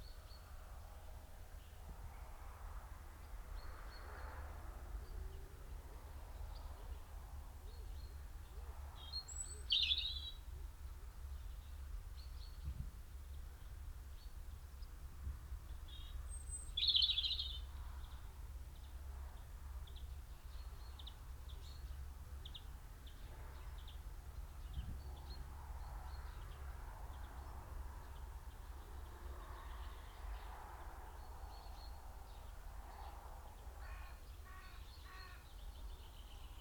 Luttons, UK - Chaffinch song soundscape ...
Chaffinch song and call soundscape ... recorded with binaural dummy head to Sony minidisk ... bird song ... and calls from ...tree sparrow ... dunnock ... robin ... longtail tit ... wood pigeon ... stock dove ... great tit ... blue tit ... coal tit ... rook ... crow ... plus background noise ... traffic ...
20 February 2010, 07:40